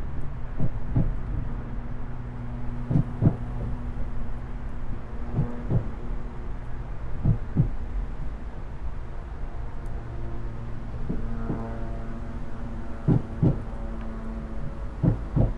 Kaunas, Lithuania, under the bridge
Standing under Vytautas The Great bridge in Kaunas. Dripping water from above...